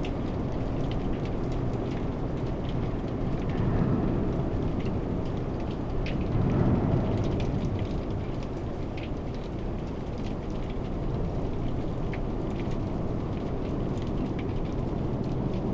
M/S microphones and two hydrophones in the river. The rattling sound is from stones in the river.
Limburg, Nederland, 26 January 2022, ~12:00